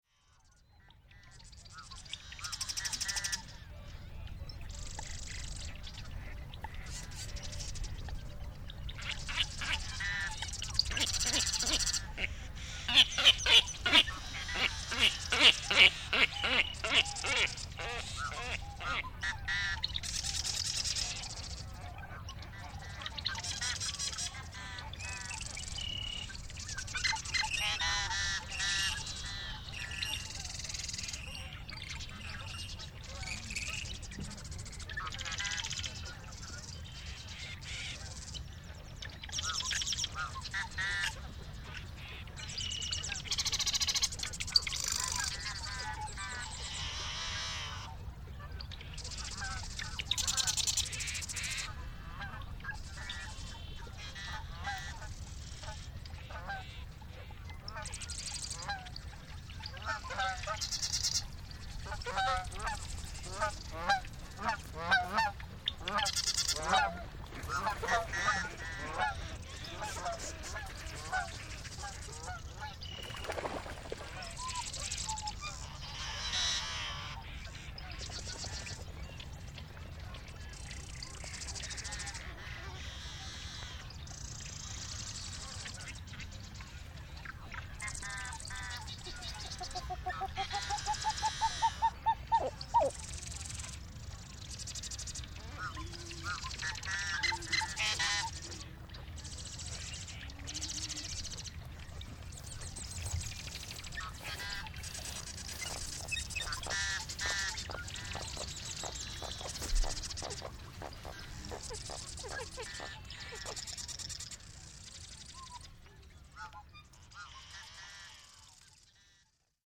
Bear River Migratory Bird Refuge at dawn
Corinne, UT, USA, 18 May 2010, 07:46